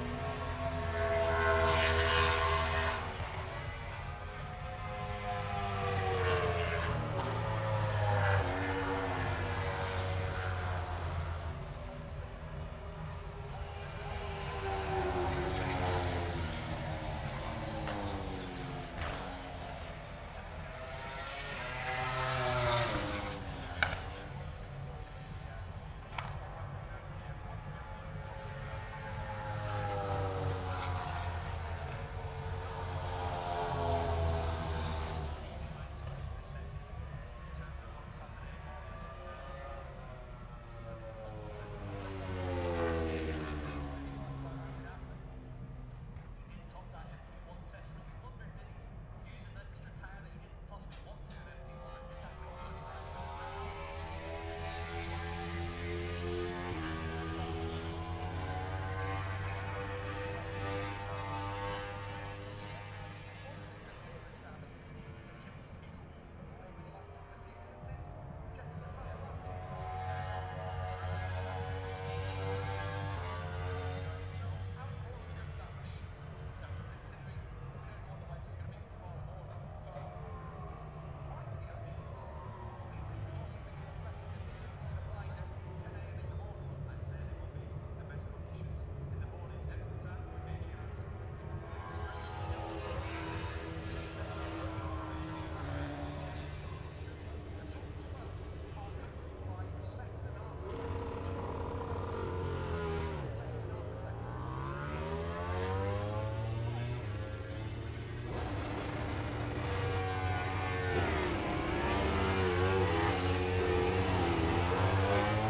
Silverstone Circuit, Towcester, UK - british motorcycle grand prix 2022 ... moto grand prix ...
british motorcycle grand prix ... moto grand prix free practice two ... dpa 4060s on t bar on tripod to zoom f6 ...